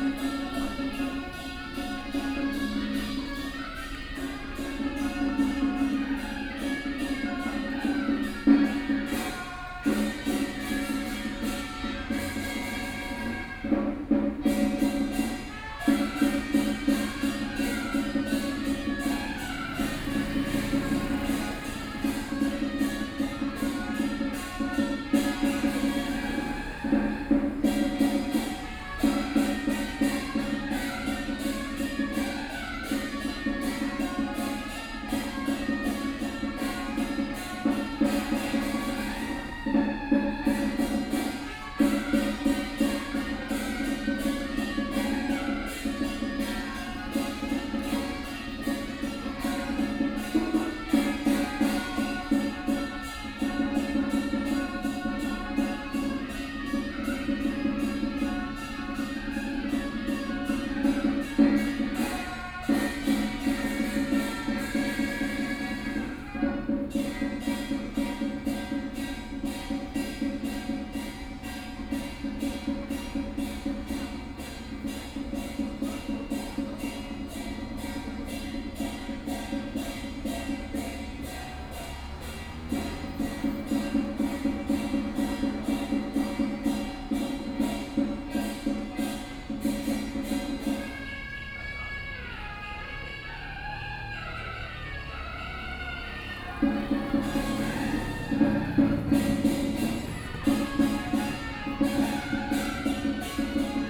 At Temple Square, Puja
Sony PCM D50+ Soundman OKM II
Gushan District, Kaohsiung City, Taiwan